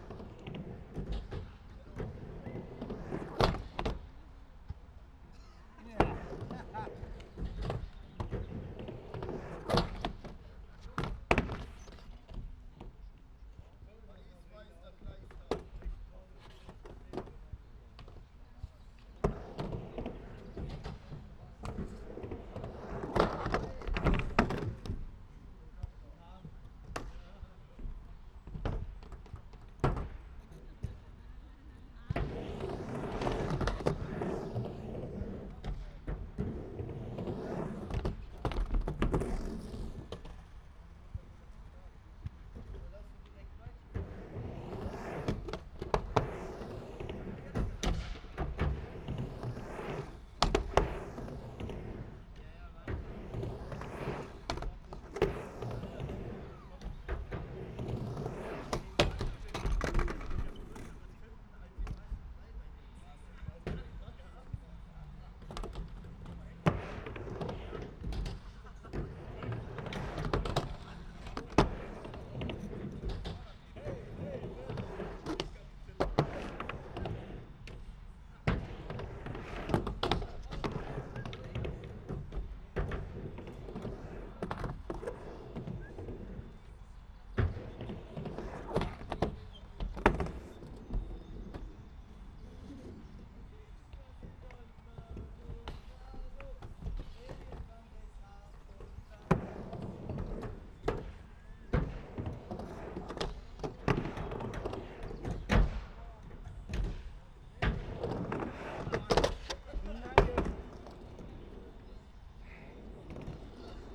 Stadtwald Köln - half pipe, skater
Skater at half pipe Stadtwaldrampe, city forest park Köln
(Sony PCM D50, Primo E172)